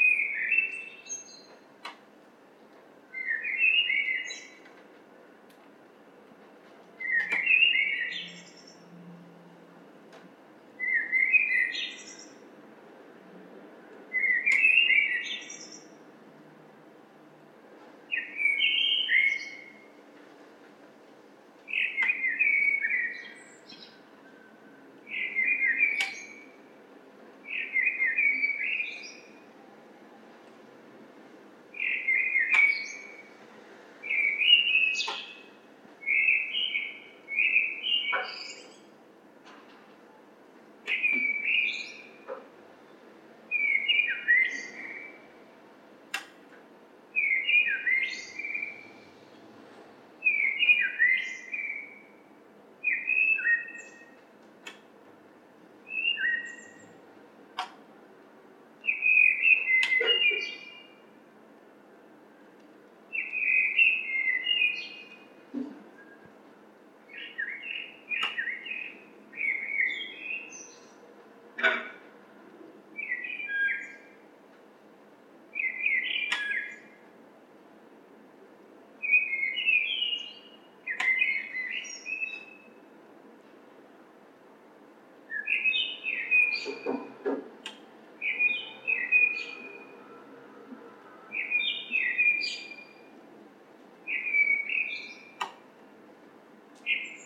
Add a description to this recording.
Sound of a blackbird singing in my yard, the sound of my cat who meows on the rooftop, the distant noise of people entering the building, sound of dual-tone siren away. Zoom H4N + ME66 Shotgun